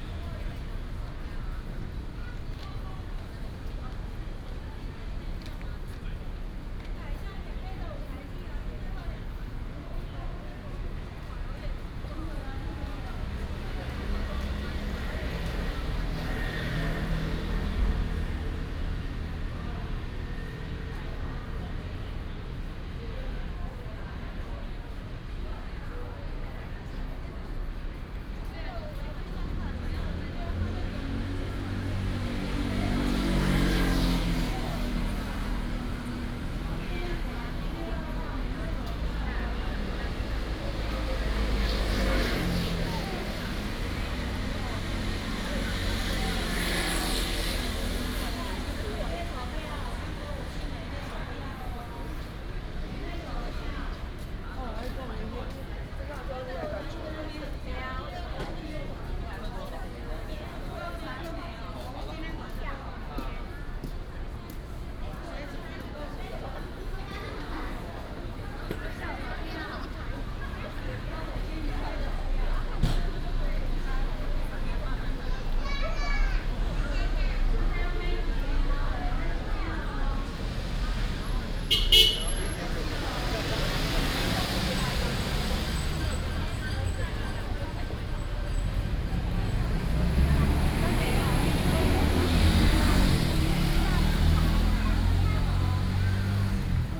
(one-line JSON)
{"title": "Ln., Sec., Zhongxiao E. Rd., Xinyi Dist., Taipei City - holiday", "date": "2016-12-18 15:27:00", "description": "holiday, traffic sound, Many tourists", "latitude": "25.04", "longitude": "121.56", "altitude": "14", "timezone": "GMT+1"}